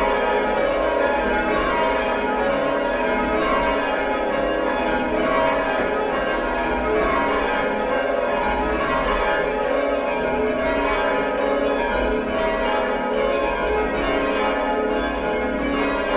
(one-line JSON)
{"title": "University of Oxford, University Offices, Wellington Square, Oxford, UK - Oxford Society of Change Ringers ringing the bells down at the end of their ringing practice", "date": "2013-11-27 20:57:00", "description": "This was recorded inside the bell tower at Lincoln College, Oxford, where there are 8 bells, dating from the 1600s. They are not very big bells, and they sound very different outside the tower from inside it! I was at the bell-ringing practice of the Oxford Society of Change Ringers and I learnt that at the start of the practice all of the bells must be rung up (that is, they must be rung so that their 'mouths' are facing upwards;) and that at the end of the practice all of the bells must be rung down again (that is, they must be rung so that their 'mouths' are facing down.) I am only monitoring on laptop speakers, so it's hard to hear whether or not the recording preserves the same sense that I had while in the room, of the bells above us gradually turning over until they were ringing downwards, but that is what was happening in this recording.", "latitude": "51.75", "longitude": "-1.26", "altitude": "72", "timezone": "Europe/London"}